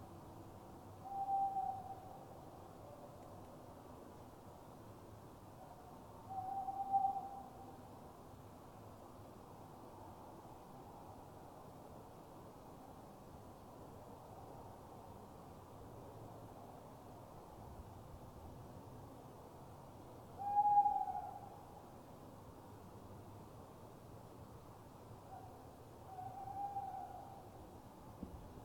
Durweston, Dorset, UK - Owls at Dusk
4 April 2012, 20:49